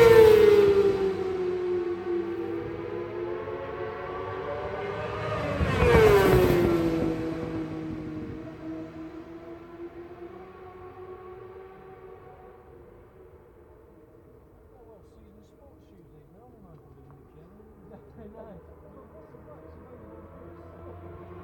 world superbikes 2004 ... supersport 600s qualifying ... one point stereo mic to minidisk ... date correct ... time not ...
Longfield, UK, July 2004